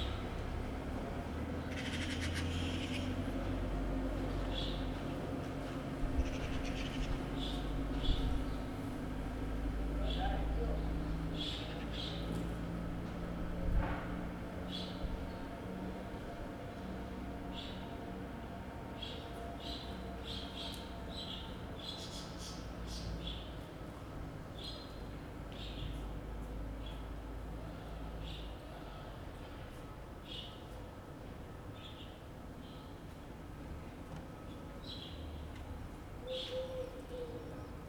{
  "title": "Carrer de Joan Blanques, Barcelona, España - 2020 March 23 BCN Lockdown",
  "date": "2020-03-24 09:15:00",
  "description": "Recorded from a window during the Covid-19 lockdown. It's a sunny spring morning with birds singing and some movement of people, even on the face of the lockdown.",
  "latitude": "41.40",
  "longitude": "2.16",
  "altitude": "65",
  "timezone": "Europe/Madrid"
}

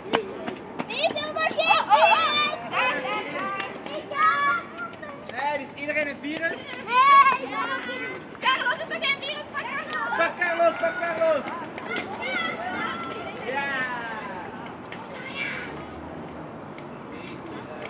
{
  "title": "Leuven, Belgique - Children playing",
  "date": "2018-10-13 14:10:00",
  "description": "Children playing at the square, people enjoy the sun, traffic noise.",
  "latitude": "50.89",
  "longitude": "4.70",
  "altitude": "18",
  "timezone": "Europe/Brussels"
}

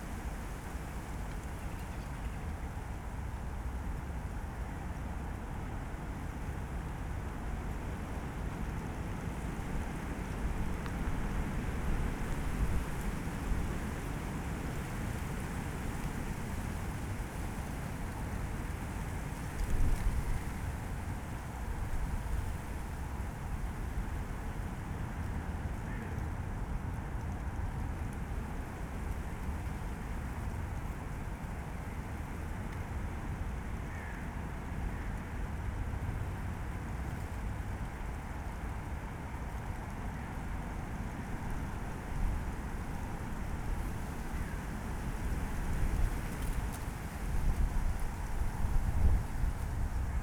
{
  "title": "Tempelhofer Feld, Berlin, Deutschland - cold wind in poplars",
  "date": "2015-01-03 15:00:00",
  "description": "place revisited. cold agressive wind today\n(Sony PCM D50, OKM2)",
  "latitude": "52.48",
  "longitude": "13.40",
  "altitude": "42",
  "timezone": "Europe/Berlin"
}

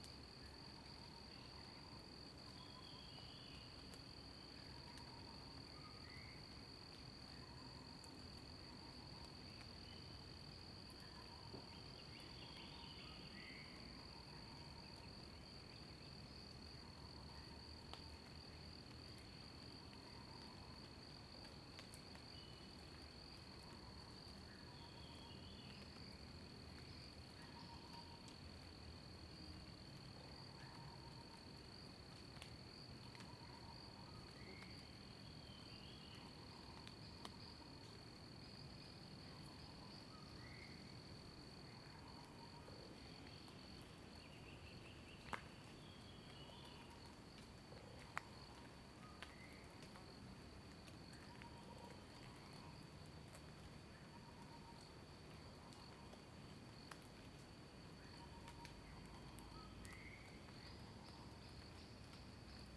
{
  "title": "水上, 埔里鎮桃米里, Taiwan - In the woods",
  "date": "2016-04-19 06:36:00",
  "description": "In the woods, birds sound\nZoom H2n MS+XY",
  "latitude": "23.94",
  "longitude": "120.92",
  "altitude": "542",
  "timezone": "Asia/Taipei"
}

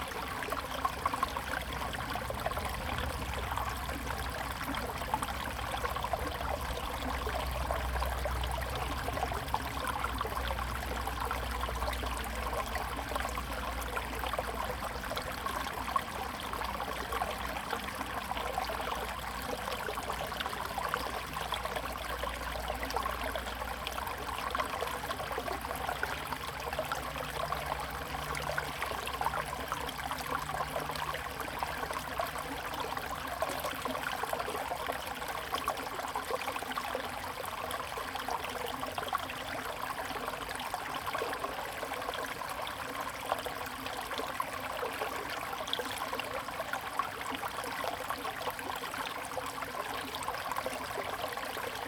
{
  "title": "見學中心紙教堂, 桃米里 Puli Township - Aqueduct",
  "date": "2016-05-19 06:57:00",
  "description": "Aqueduct, Flow sound\nZoom H2n MS+XY",
  "latitude": "23.94",
  "longitude": "120.93",
  "altitude": "479",
  "timezone": "Asia/Taipei"
}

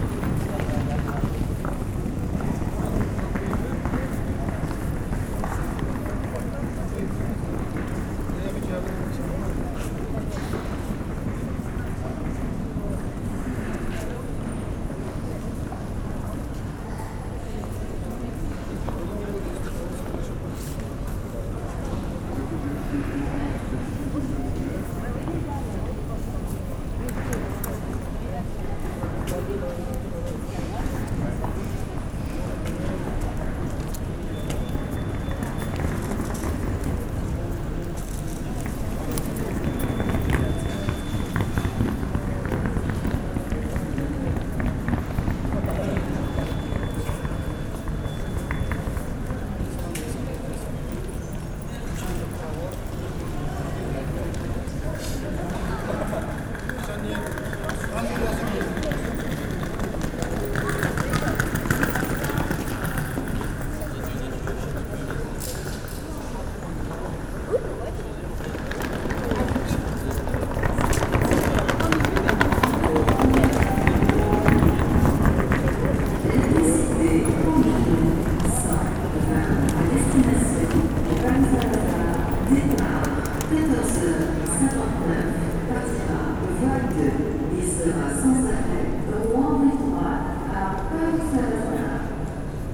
{
  "title": "Rouen, France - Rouen station",
  "date": "2016-07-23 14:40:00",
  "description": "A trip into the Rouen station, on a saturday afternoon, and taking the train to Paris.",
  "latitude": "49.45",
  "longitude": "1.09",
  "altitude": "35",
  "timezone": "Europe/Paris"
}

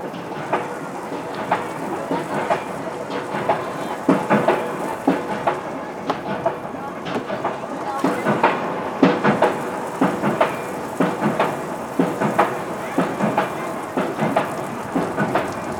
{
  "title": "Irma Pedersens Gade, Aarhus, Danmark - Aarhus Havnebassin",
  "date": "2019-01-07 14:00:00",
  "latitude": "56.16",
  "longitude": "10.23",
  "altitude": "10",
  "timezone": "Europe/Copenhagen"
}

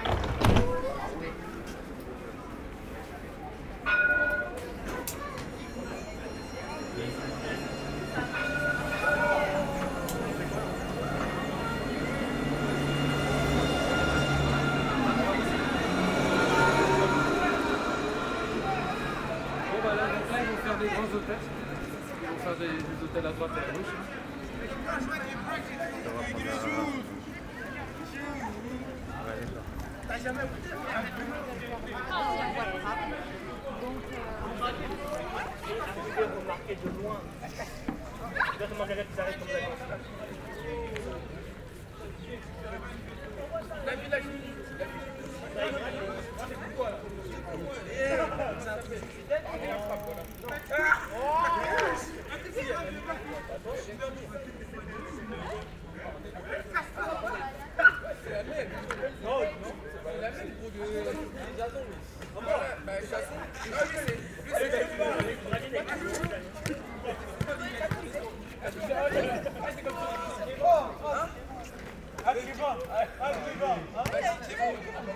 {
  "title": "Tours, France - Anatole France station",
  "date": "2017-08-12 16:40:00",
  "description": "Recording of the tramways passing by in the Anatole France station, and noisy young people playing nearby.",
  "latitude": "47.40",
  "longitude": "0.69",
  "altitude": "55",
  "timezone": "Europe/Paris"
}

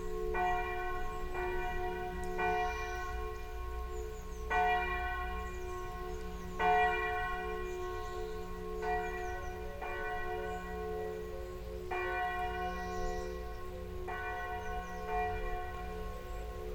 {
  "title": "Chamesson, France - Bells ringing in a small village",
  "date": "2017-07-31 12:00:00",
  "description": "In this small village of the Burgundy area, we are in a very old wash-house, renovated by township. Nearby the Seine river, we are waiting the rains stops, it makes a inconspicuous music on the Seine water, absolutely dull like a lake here. At 12 a.m., the bell is ringing time and angelus. It's a lovely ambiance.",
  "latitude": "47.79",
  "longitude": "4.54",
  "altitude": "241",
  "timezone": "Europe/Paris"
}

